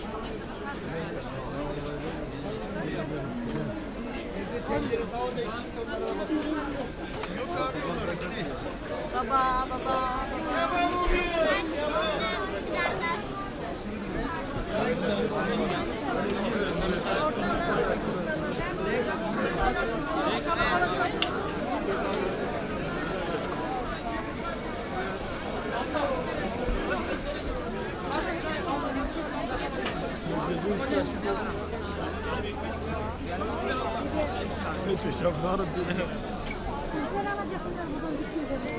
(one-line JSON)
{"title": "Istanbul spice market soundwalk", "description": "recording of the dealers at the spice market, early evening in November.", "latitude": "41.02", "longitude": "28.97", "altitude": "10", "timezone": "Europe/Tallinn"}